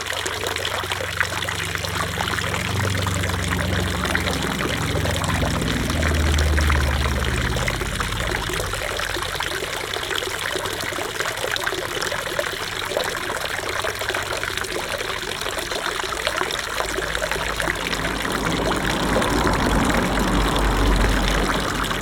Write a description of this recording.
Le bassin fontaine de la côte de Groisin, sauveur des cyclistes assoiffés. Construit en 1912 c'est l'année de la publication du "manifeste des bruits" par Luigi Russolo.